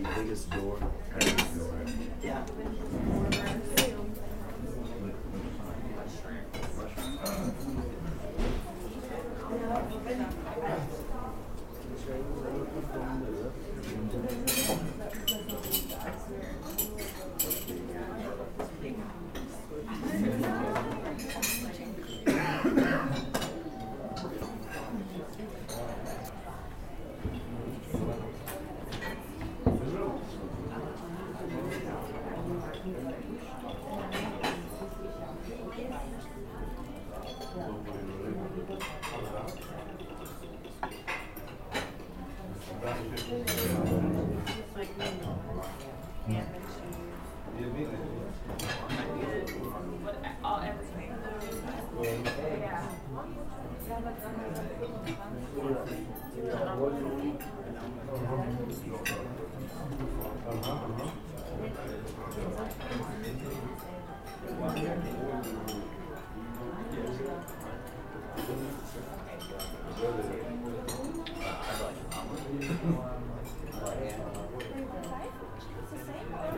St. Gallen (CH), radisson hotel, breakfast buffet
recorded june 16, 2008. - project: "hasenbrot - a private sound diary"